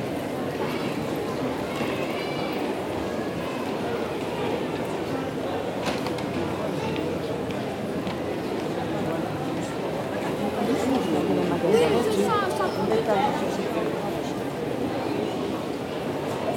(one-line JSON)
{"title": "Ottignies-Louvain-la-Neuve, Belgium - Shopping mall", "date": "2018-12-15 16:40:00", "description": "A large shopping mall during a long walk. Make emerge a soothing sound from a huge commercial mall may seem like an antithesis. Indeed, the atmosphere can be particularly stressful. However, listening without being drowned into the busy place causes a sensation of calm. It's relaxing. After a while, we don't listen ; we hear, we are there but without being there. That's why I had chosen one of the worst dates possible : just a week before Christmas on a busy Saturday afternoon. These shops are so crowded that we are in a kind of wave, an hubbub, a flow. Discussions become indistinct. In reality excerpt a few fragments that startle in this density, we have an impression of drowning.", "latitude": "50.67", "longitude": "4.62", "altitude": "117", "timezone": "Europe/Brussels"}